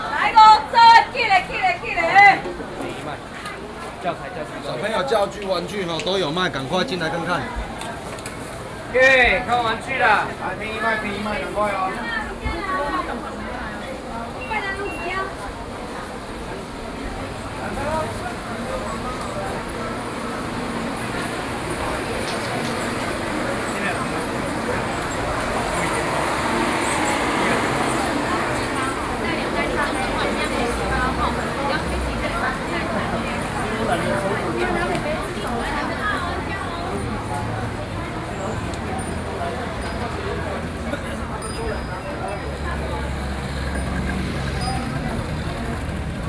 Sec., Minsheng Rd., Banqiao Dist., New Taipei City - Traditional markets